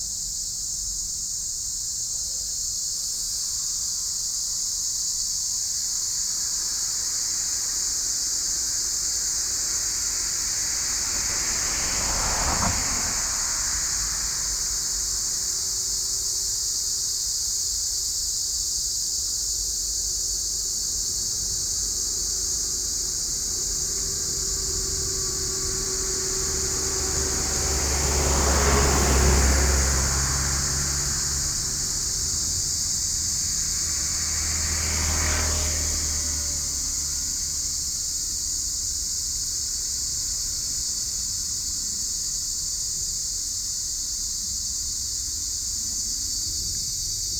Baolin Rd., Linkou Dist., New Taipei City - Cicadas cry
Cicadas cry, Bird calls, traffic sound
Sony PCM D50